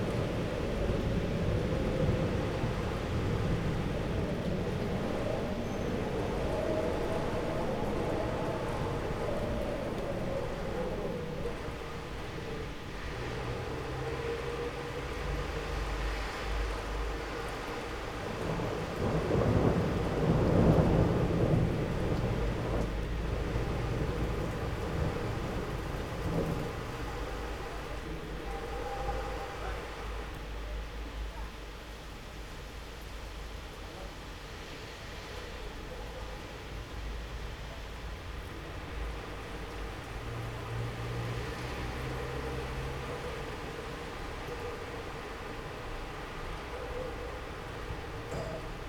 while windows are open, Maribor, Slovenia - piš vetra